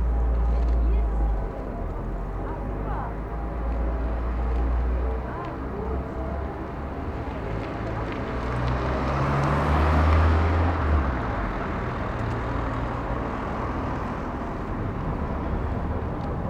Berlin: Vermessungspunkt Friedelstraße / Maybachufer - Klangvermessung Kreuzkölln ::: 13.02.2011 ::: 18:06